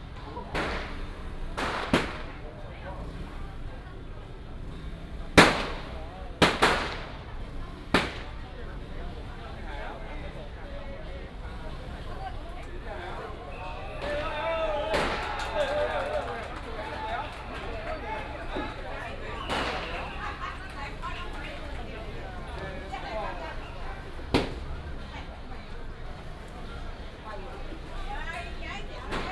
Sec., Linsen Rd., Huwei Township - Fireworks and firecrackers
Fireworks and firecrackers, Traffic sound, Baishatun Matsu Pilgrimage Procession
Yunlin County, Taiwan, 3 March